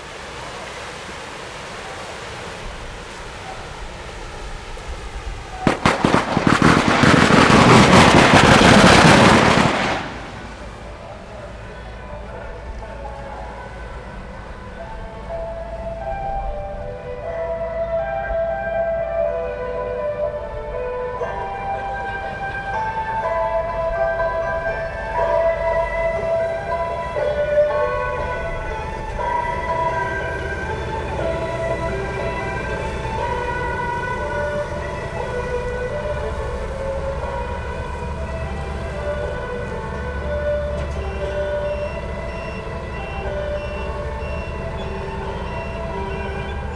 {"title": "東興宮, Gongliao Dist., New Taipei City - In the temple square", "date": "2011-11-21 14:47:00", "description": "In the temple square, Parking lot, Firecrackers\nZoom H4n+ Rode NT4", "latitude": "25.02", "longitude": "121.95", "altitude": "6", "timezone": "Asia/Taipei"}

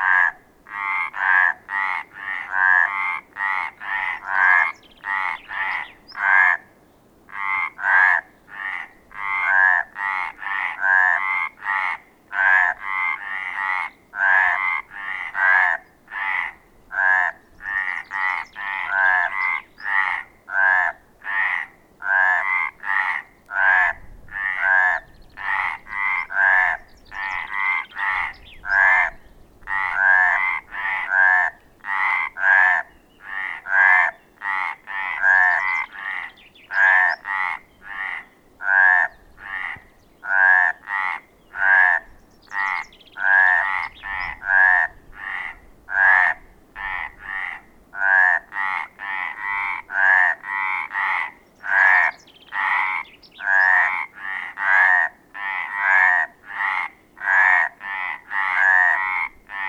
{"title": "Montdardier, France - Crazy frogs", "date": "2016-05-03 21:45:00", "description": "On this evening, frogs are becoming crazy. It makes so much noise ! How can we live with these animals ?!", "latitude": "43.95", "longitude": "3.55", "altitude": "672", "timezone": "Europe/Paris"}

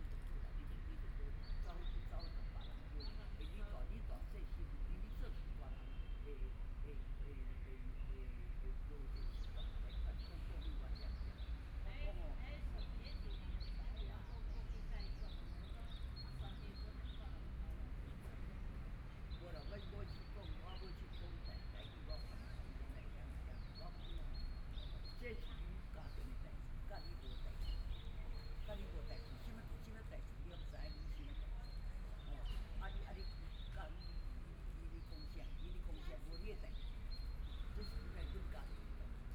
{"title": "大直里, Taipei City - small Park", "date": "2014-02-25 15:08:00", "description": "Traffic Sound, Birdsong, Community-based small park, Sunny weather\nPlease turn up the volume\nBinaural recordings, Zoom H4n+ Soundman OKM II", "latitude": "25.08", "longitude": "121.55", "timezone": "Asia/Taipei"}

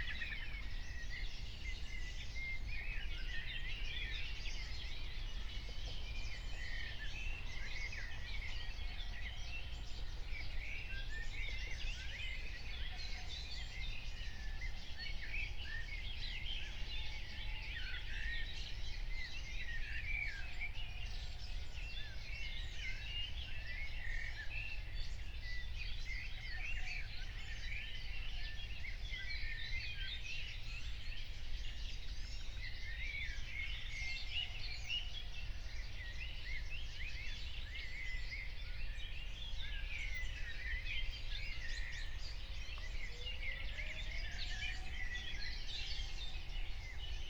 {"date": "2021-06-16 03:45:00", "description": "03:45 Berlin, Wuhletal - Wuhleteich, wetland", "latitude": "52.53", "longitude": "13.58", "altitude": "40", "timezone": "Europe/Berlin"}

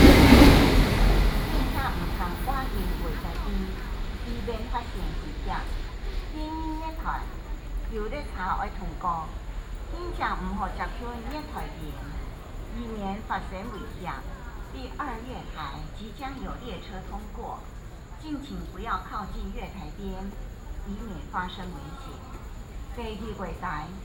{"title": "Jung Li City, Taoyuan - Railway platforms", "date": "2012-06-11 20:45:00", "description": "Railway platforms, Train traveling through, Station broadcasting, Sony PCM D50 + Soundman OKM II", "latitude": "24.97", "longitude": "121.26", "altitude": "126", "timezone": "Asia/Taipei"}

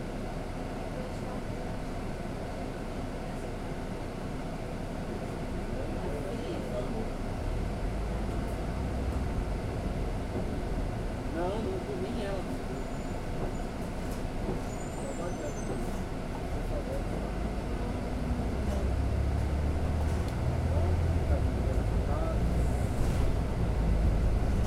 {"title": "R. Joaquim Távora - Vila Mariana, São Paulo - SP, 04015-012, Brasil - Andando de ônibus", "date": "2018-09-22 12:31:00", "description": "Paisagem sonora do interior de um ônibus que vai da Joaquim até a Av. Santo Amaro no horário de almoço.", "latitude": "-23.59", "longitude": "-46.64", "altitude": "804", "timezone": "America/Sao_Paulo"}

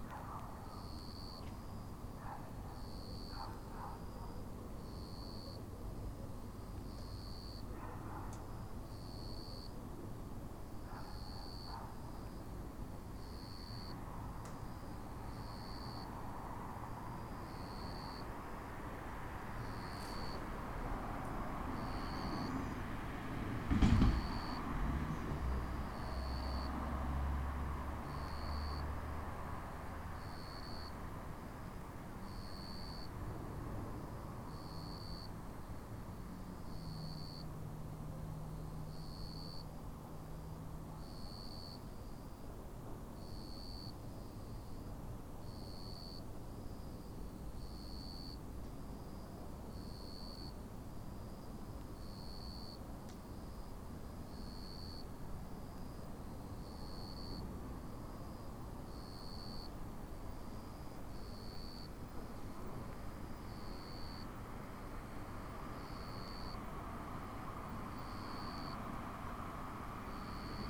{"title": "ул. Парижской Коммуны, Барнаул, Алтайский край, Россия - Night cicadas", "date": "2018-07-26 02:00:00", "description": "Recorded at 2:00 AM at the old railroad tracks. Cicadas (jr something similar) singing, distant cars passing by, ambient sounds.", "latitude": "53.36", "longitude": "83.78", "altitude": "189", "timezone": "Asia/Barnaul"}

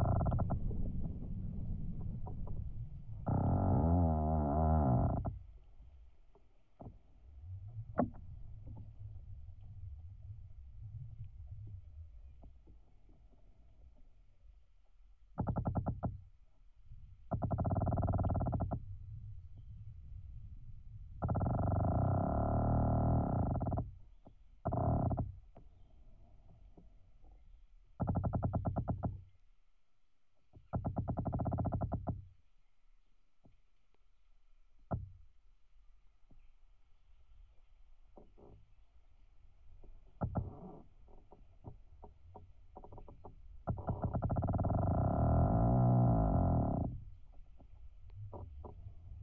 {"title": "Utena, Lithuania, a tree", "date": "2018-04-18 12:20:00", "description": "contact microphones on a tree in a city park", "latitude": "55.51", "longitude": "25.59", "altitude": "106", "timezone": "Europe/Vilnius"}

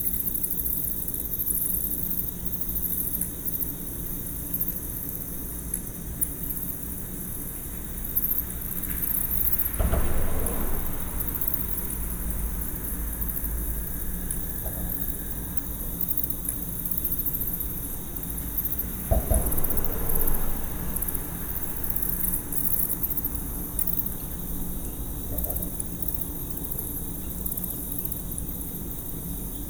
{"title": "WLD 2012: Bat swarm under the Waugh bridge, Houston, Texas - WLD: 2012: Buffalo Bayou's Night Buddies", "date": "2012-07-18 23:45:00", "description": "Midnight on the bayou after many consecutive days of rain, under the Waugh Dr. bridge, Houston, Texas. Mexican Freetail bats, roaches, insects, crickets, frogs, night herons, cars, traffic..\nChurch Audio CA-14 omnis + binaural headset > Tascam DR100 MK-2", "latitude": "29.76", "longitude": "-95.40", "altitude": "4", "timezone": "America/Chicago"}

